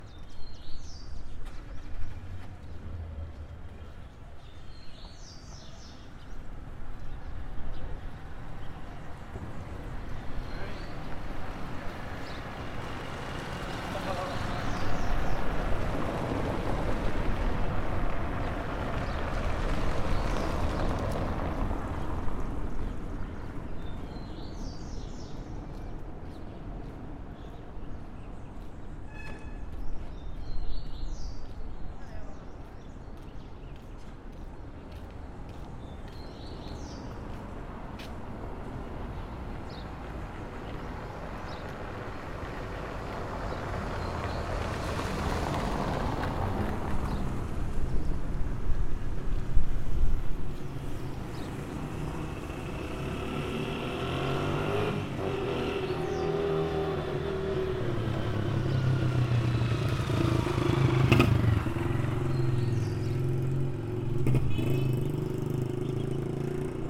Praça do Landufo Alves Cruz das Almas, BA, Brasil - Pracinha em Frente ao Colegio Landufo Alves
Captação feita com base da disciplina de Som da Docente Marina Mapurunga, professora da Universidade Federal do Recôncavo da Bahia, Campus Centro de Artes Humanidades e Letras. Curso Cinema & Audiovisual. CAPTAÇÃO FOI FEITA COM UM PCM DR 50, na praça do Landufo Alves, com um barulho de um lava jato. EM CRUZ DAS ALMAS-BAHIA